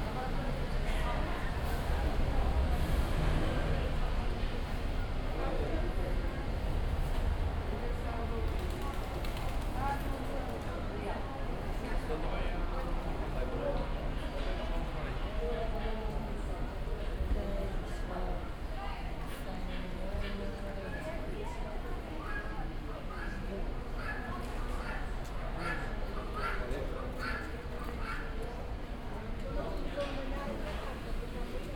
Porto, mercado do Bolhao - mercado do bolhao, closing time
walk at mercado do bolhao, porto. closing time, cleanup, market is almost empty. (binaural, use headphones)
Oporto, Portugal, 11 October, 16:50